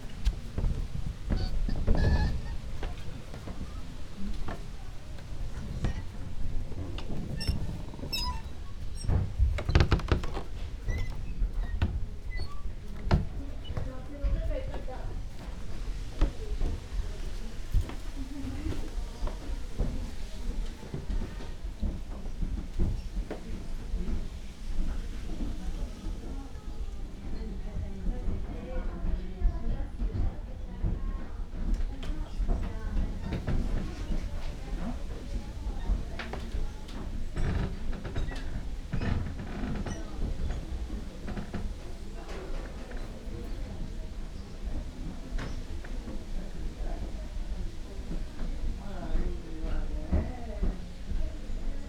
dry landscape garden, Daisen-in, Kyoto - walking the wooden floor
Kyoto, Kyoto Prefecture, Japan, 3 November 2014